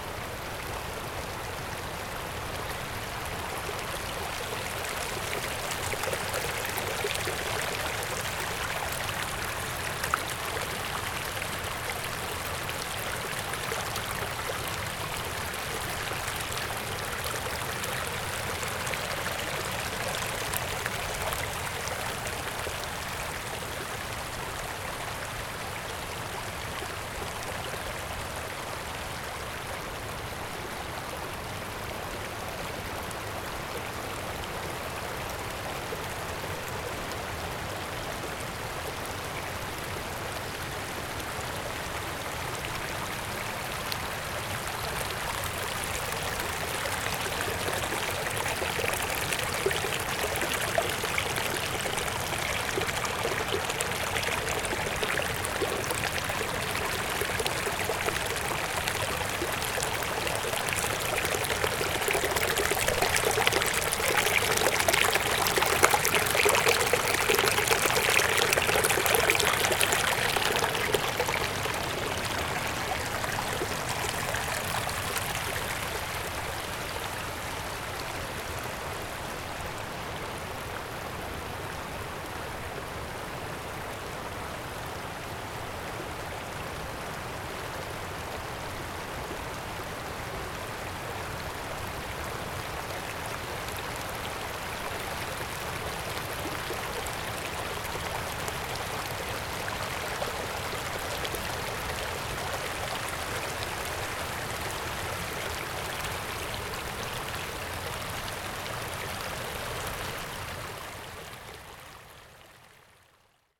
Alp Grüm, Poschiavo, Schweiz - Bergbachgeplauder Aqua da Palü
Wassergurgeln -gemurmel -plätschern. Wasser im Bergbach - eifach verspielte Natur